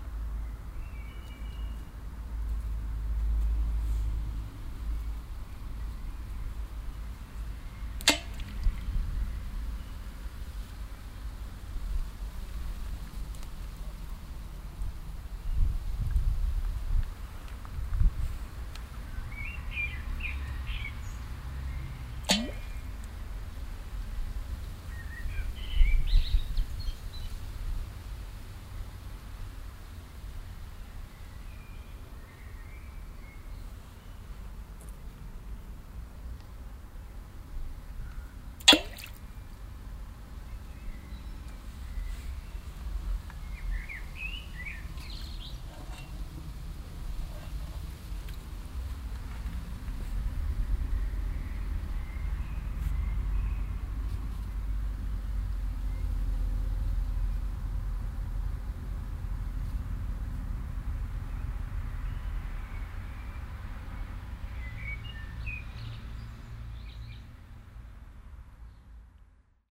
refrath, lustheide, garten, feuerschale
soundmap: refrath/ nrw
sonntags nachmittags garten atmosphäre, verkehrsresonanzen, vögel, steine fallen in die wasser gefüllte feuerschale
project: social ambiences/ listen to the people - in & outdoor nearfield recordings